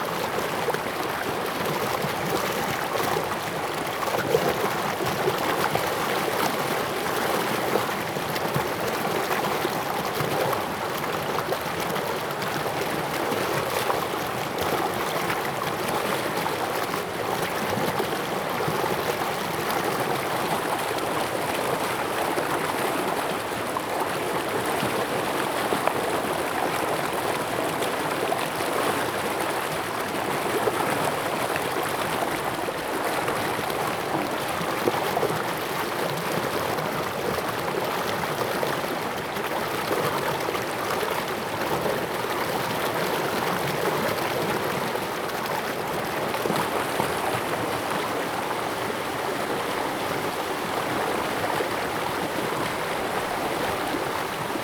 Brook, In the river, stream
Zoom H2n MS+XY
Nantou County, Taiwan, 19 April 2016, 15:33